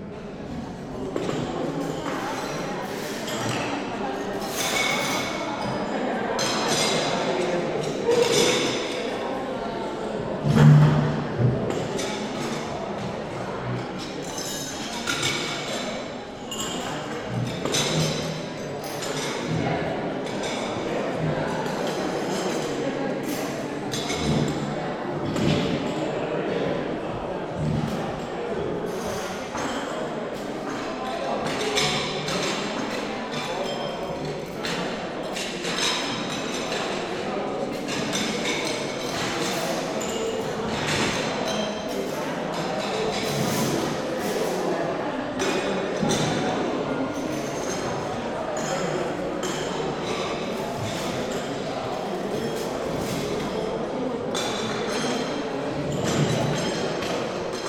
Dehrn, public hall, after funeral feast - after funeral feast, women collecting dishes
wed 06.08.2008, 16:35
after funeral feast in the public hall, women cleaning up, collecting dishes